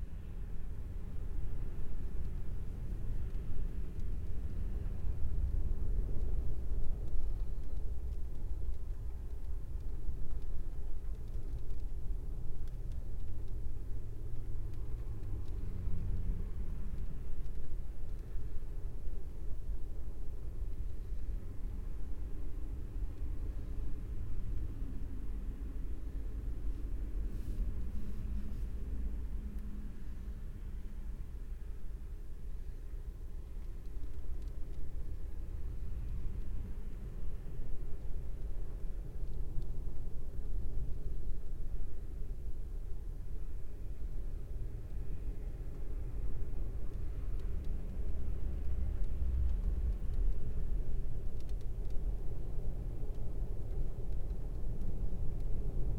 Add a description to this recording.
I have been exploring the soundscape of my commute and listening specifically in my car along my most regularly driven route - the A4074. This is the sound inside the car in the middle of a windy and rainy storm, with the wind buffeting the vehicle and the passing wash of the traffic. It's a bit low as I had the mics down quite low to cope with the hardcore rumble of the road.